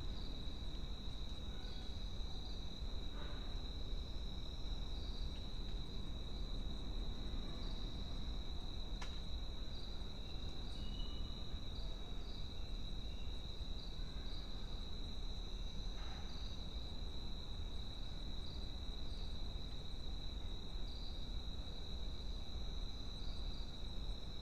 22:30 Film and Television Institute, Pune, India - back garden ambience
operating artist: Sukanta Majumdar
25 February 2022, 22:30